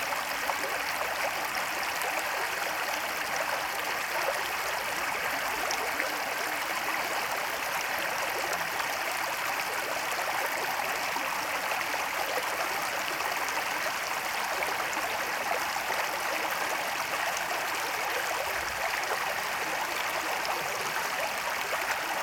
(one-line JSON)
{"title": "River Poltominka-noise trees, White Sea, Russia - River Poltominka-noise trees", "date": "2014-06-10 22:06:00", "description": "River Poltominka-noise trees.\nРека Полтоминка, шум воды на перекатах, шум деревьев при порывах ветра.", "latitude": "65.19", "longitude": "39.96", "altitude": "4", "timezone": "Europe/Moscow"}